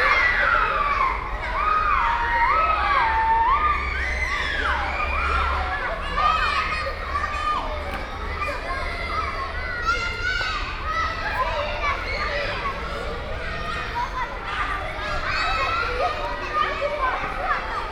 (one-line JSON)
{"title": "Brussels, Rue Sterckx, Schoolyard", "date": "2011-10-14 10:51:00", "description": "Children playing in the schoolyard.", "latitude": "50.83", "longitude": "4.34", "altitude": "61", "timezone": "Europe/Brussels"}